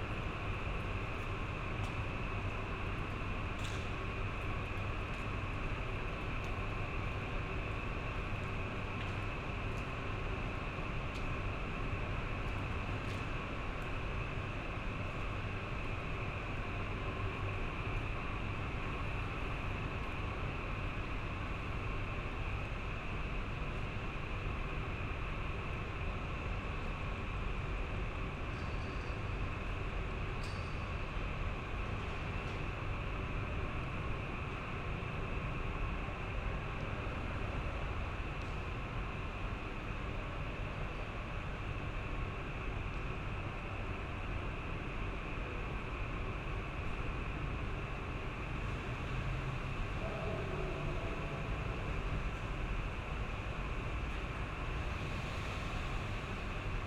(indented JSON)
{
  "title": "bus terminal, Trieste, Italy - bus leaving station",
  "date": "2013-09-07 19:45:00",
  "description": "Trieste bus termial near main station, a bus is leaving the terminal, buzz of electrical devices and aircon.\n(SD702, AT BP4025)",
  "latitude": "45.66",
  "longitude": "13.77",
  "altitude": "10",
  "timezone": "Europe/Rome"
}